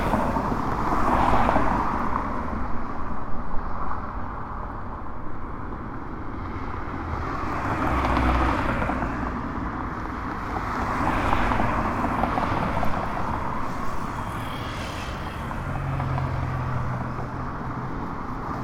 Traffic on Las Torres avenue during COVID-19 in phase 2 in León, Guanajuato. Mexico. Outside the Suzuki car agency.
This is a busy avenue. Although there are several vehicles passing in this quarantine, the difference in vehicle flow is very noticeable.
(I stopped to record this while I was going to buy my mouth covers.)
I made this recording on April 14th, 2020, at 5:42 p.m.
I used a Tascam DR-05X with its built-in microphones and a Tascam WS-11 windshield.
Original Recording:
Type: Stereo
Esta es una avenida con mucho tráfico. Aunque sí hay varios vehículos pasando en esta cuarentena, sí se nota mucho la diferencia de flujo vehicular.
(Me detuve a grabar esto mientras iba a comprar mis cubrebocas.)
Esta grabación la hice el 14 de abril 2020 a las 17:42 horas.